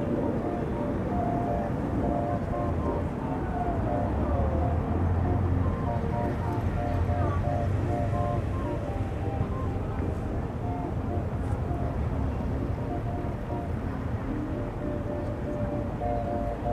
Piazza del Popolo, Rome, Carillion 1
Piazza del Popolo, Rome.
Carillion